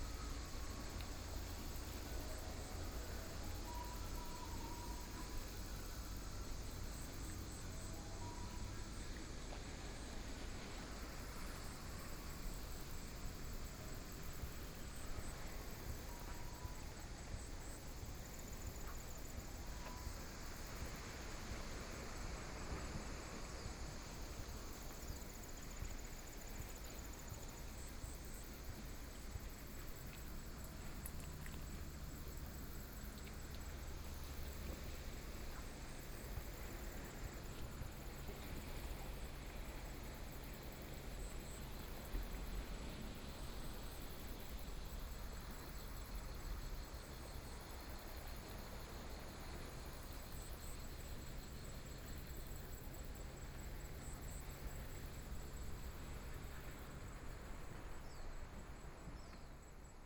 {
  "title": "貢寮區福連村, New Taipei City - Small village",
  "date": "2014-07-29 18:39:00",
  "description": "Small village, Sound of the waves, Traffic Sound",
  "latitude": "25.02",
  "longitude": "121.99",
  "altitude": "4",
  "timezone": "Asia/Taipei"
}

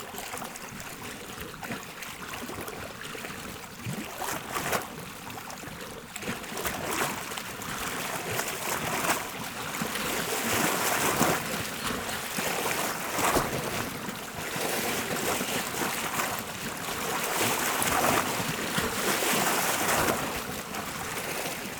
{
  "title": "Hayravank, Arménie - Sevan lake",
  "date": "2018-09-04 09:00:00",
  "description": "Sound of the Sevan lake, a beautiful blue and cold water lake, near the Hayravank monastery.",
  "latitude": "40.43",
  "longitude": "45.11",
  "altitude": "1905",
  "timezone": "GMT+1"
}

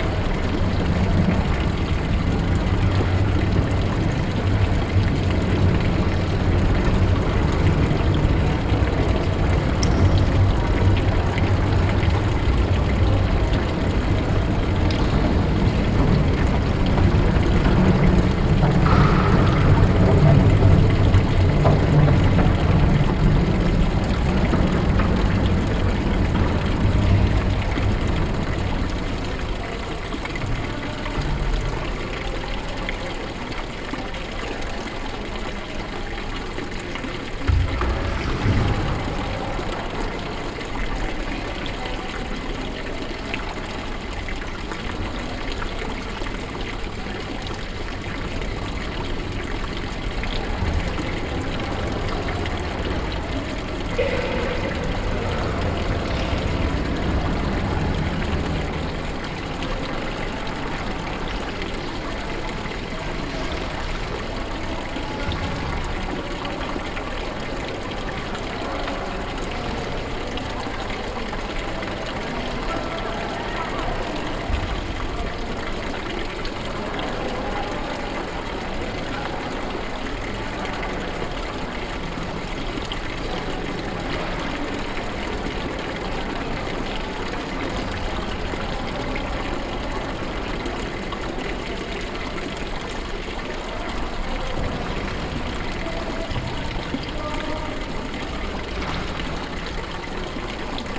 {
  "date": "2011-06-03 11:42:00",
  "description": "Norway, Oslo, Oslo Radhus, Hall, fountain, water, binaural",
  "latitude": "59.91",
  "longitude": "10.73",
  "altitude": "18",
  "timezone": "Europe/Oslo"
}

